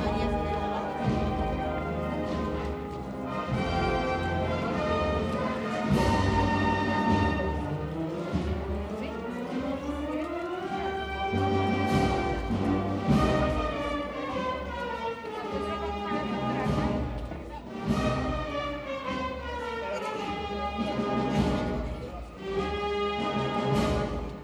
Final March of the easter procession on the streets of Madrid to Iglesia de San Miguel
Night on Sunday the 1th of April
recorded with Zoom H6 and created by Yanti Cornet